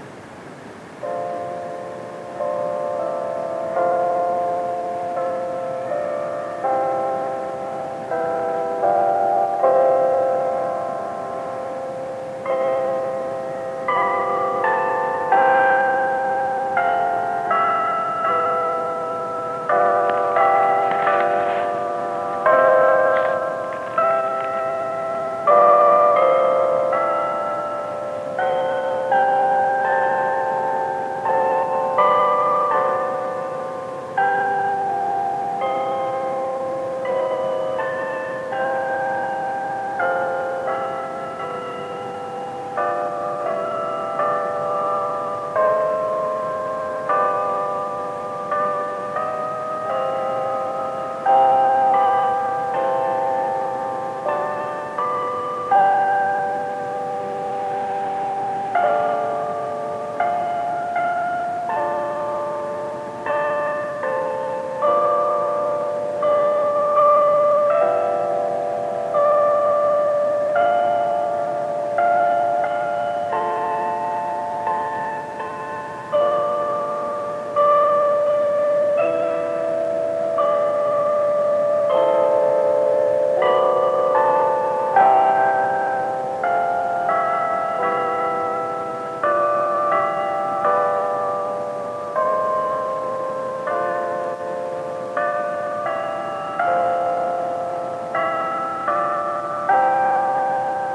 Old Main Lawn, Fayetteville, AR, USA - Alma Mater Bells, University of Arkansas Campus
Recording of the daily Alma Mater bells that sound from Old Main on the University of Arkansas campus. Recorded with an Olympus microcassette recorder.
25 October, 17:00